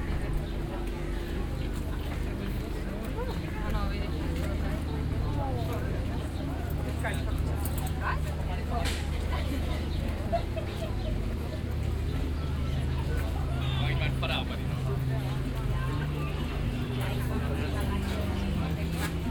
sunday afternoon, walk along the so called flowmarkt, a recently established second hand market. significant for the ongoing change of this quarter.
Berlin, Germany